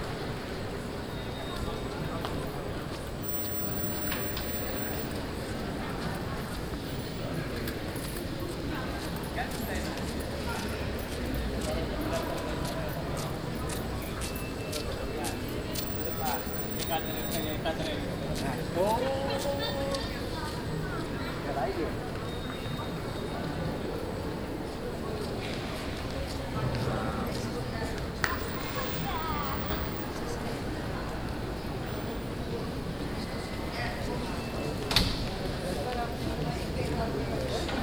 City Hall, Spui, Den Haag, Nederland - Atrium City Hall
Atrium City Hall in The Hague. A pretty quiet summer afternoon.
Recorded with a Zoom H2 with additional Sound Professionals SP-TFB-2 binaural microphones.
Den Haag, Netherlands